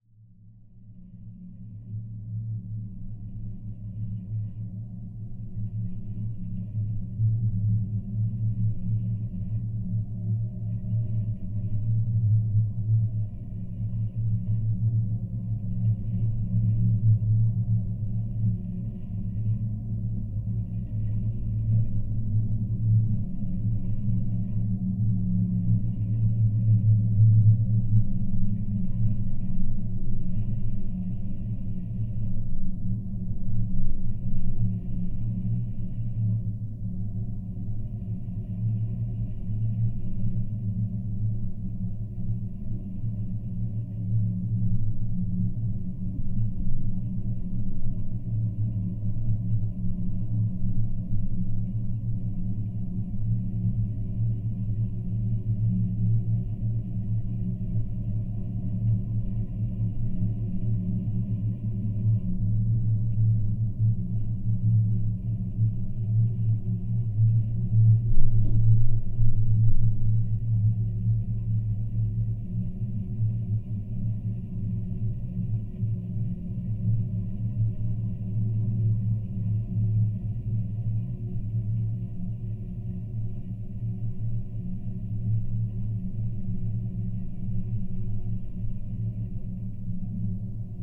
Molėtai, Lithuania, a lamp pole
Matallic lamp pole not so far from a fountain. Geophone recording.